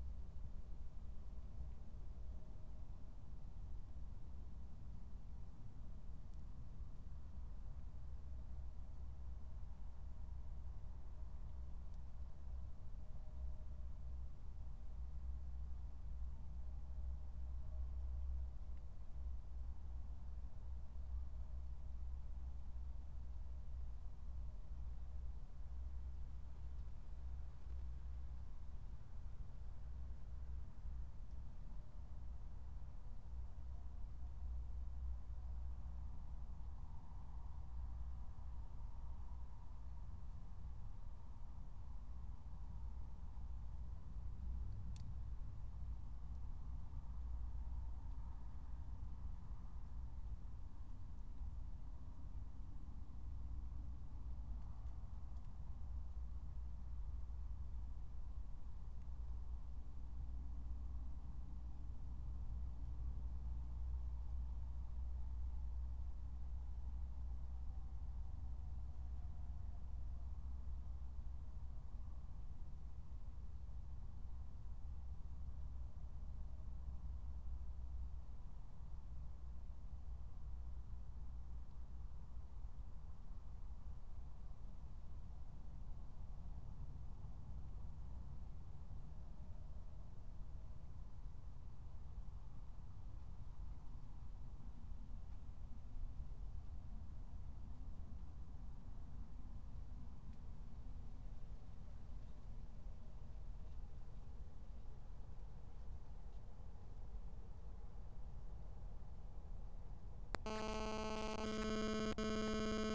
Berlin, Tempelhofer Feld - former shooting range, ambience
00:00 Berlin, Tempelhofer Feld